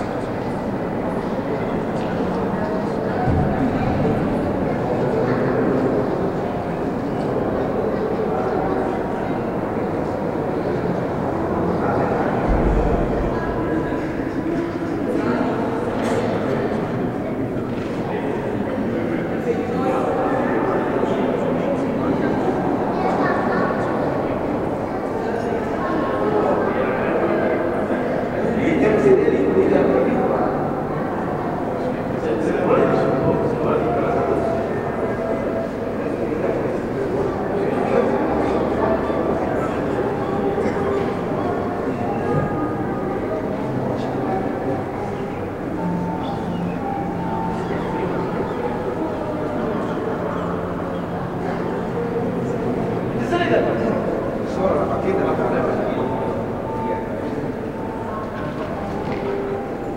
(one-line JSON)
{"title": "tondate.de: deutsches museum, halle", "date": "2011-02-25 15:09:00", "description": "halle mit flugzeugen, oben", "latitude": "48.13", "longitude": "11.58", "altitude": "524", "timezone": "Europe/Berlin"}